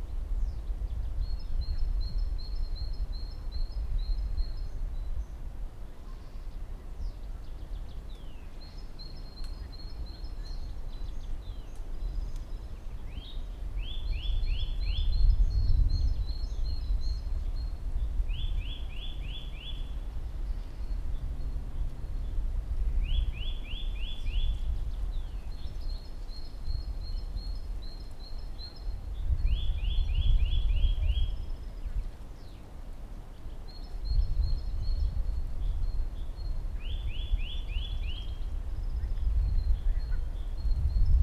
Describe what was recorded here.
Bei 20°C und Sonnenschein. 20°C (68 F) and sunshine.